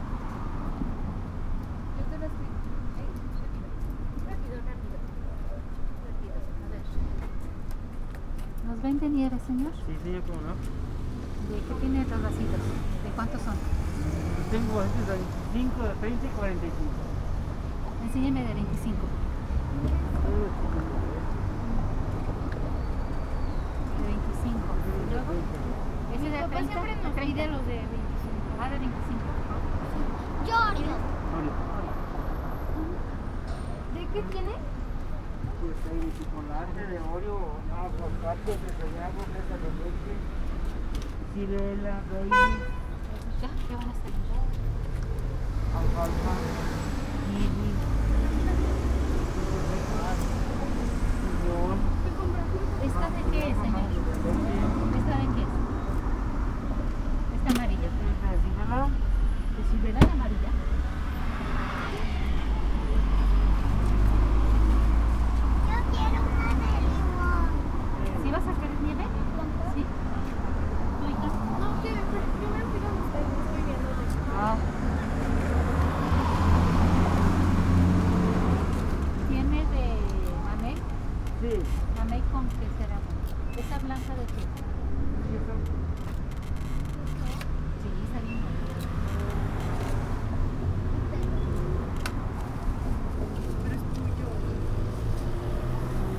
Av. Panorama, Panorama, León, Gto., Mexico - Nieves de Panorama, 18 de junio 2021.
Panorama Icecreams, June 18, 2021.
There is a street stand where you can gen icecreams from natural fruits made by the Mr. who attends you at the business. His name is Mickey.
I made this recording on June 18th, 2021, at 6:01 p.m.
I used a Tascam DR-05X with its built-in microphones and a Tascam WS-11 windshield.
Original Recording:
Type: Stereo
Es un carrito de nieves hechas de frutas naturales por el mismo señor que te atiende. Se llama Mickey.
Esta grabación la hice el 18 de junio de 2021 a las 18:01 horas.
Guanajuato, México, 18 June, 18:01